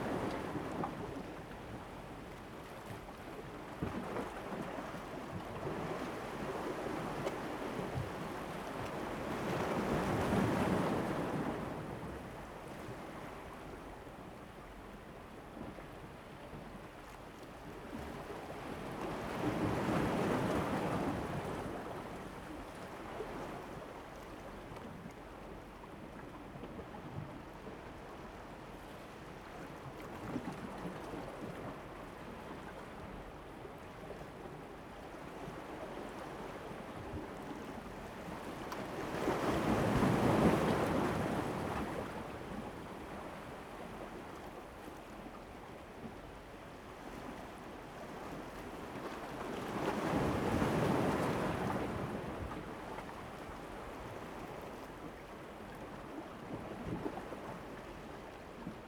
南濱公園, Hualien City - sound of the waves
sound of the waves
Zoom H2n MS+XY